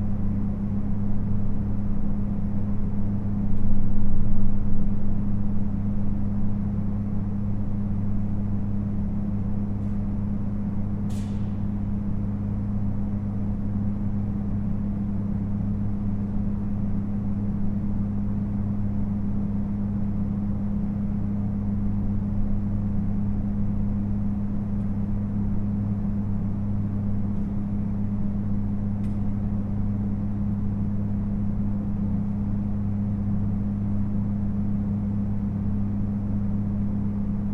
Getty Center Dr, Los Angeles, CA, USA - Ambient
Ambient capture of Getty Parking lot, level 6. HVAC drones and light car noise.
Recorded with DPA 4060 in boundary layer AB configuration into Nagra Seven.
22 December 2017, ~15:00